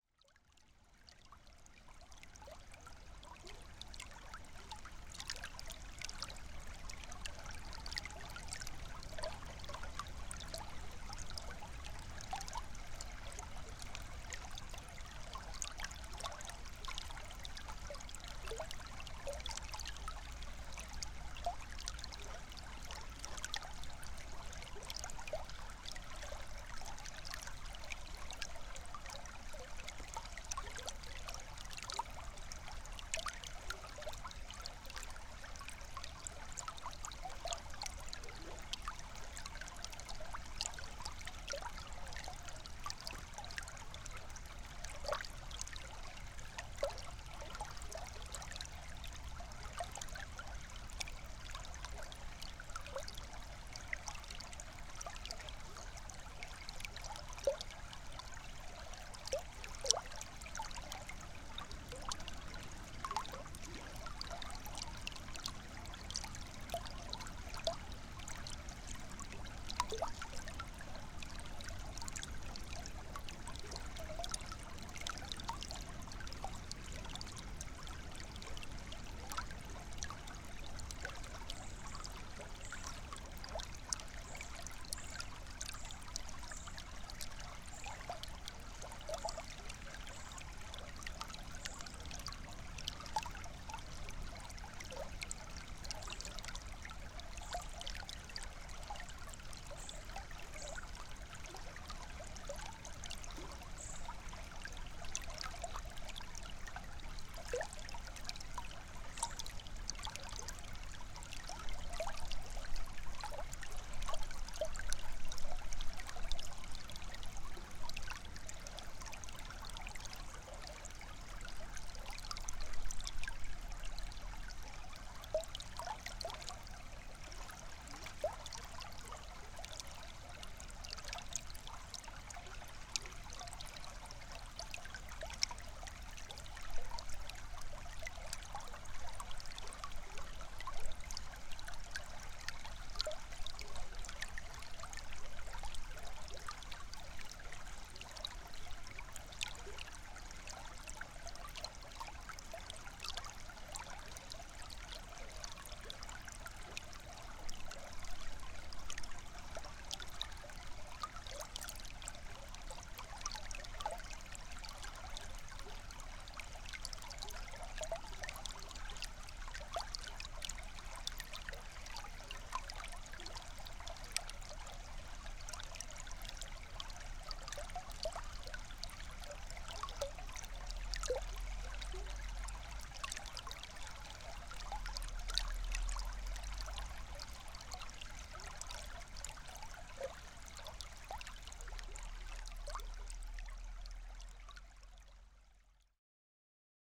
Pačkėnai, Lithuania, under bridge under water - at river
listening to river under railway bridge
30 November 2019, ~12:00